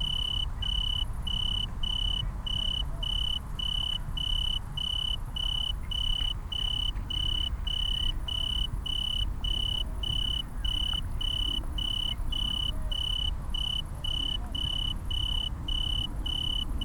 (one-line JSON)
{"title": "Tempelhofer Feld, Berlin, Deutschland - tree cricket", "date": "2019-08-05 20:10:00", "description": "approaching a single tree cricket in the grass until I could see it. Later the cricket moves away, and so did the recordist\n(Sony PCM D50, DPA4060)", "latitude": "52.48", "longitude": "13.40", "altitude": "47", "timezone": "Europe/Berlin"}